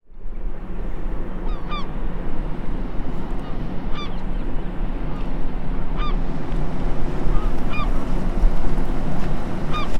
Binckhorst, Laak, The Netherlands - Seagull
a seagull flying, recorded with sennheiser ME-66 and computer
13 March 2012